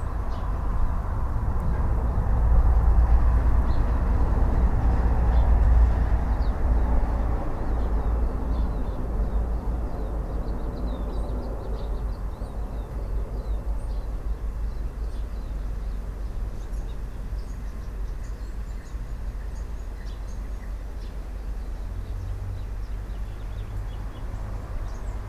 Gonville Avenue, Gonville, Whanganui, New Zealand - Garden birds and traffic
The sounds of bird life, neighbours household life, and industrial traffic passing by an urban New Zealand garden that is close to a major industrial site access road. Sunny mild temperature day in late autumn that was 5 days before COVID-19 National alert level 4 drops to level 3. Under level 4 only essential businesses and essential travel outside your house were permitted. Whilst there has been a drop off in the number of vehicles using this particular road, it was slowly increasing as people return to workplaces or travelled during lunch breaks. Identifiable birds include Tui and Piwakawaka (NZ fantail). Traffic includes large stock trucks.
23 April 2020, 12:30